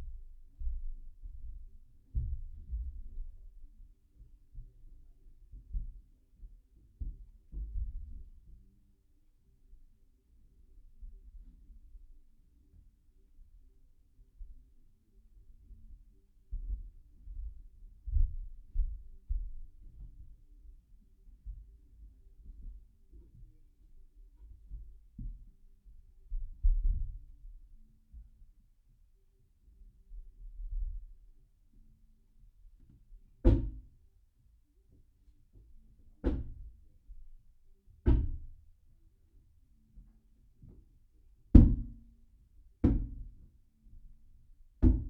Unnamed Road, Malton, UK - Ill hold it ... you hit it ...
I'll hold it ... you hit it ... roofers retiling a house ... lavalier mics clipped to sandwich box in stairwell ...
18 September 2018, 12:00